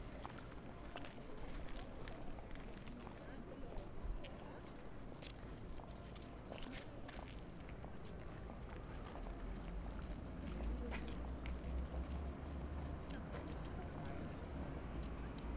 Alt Madlitz, Deutschland - Seerundweg
sitting at the lake's shore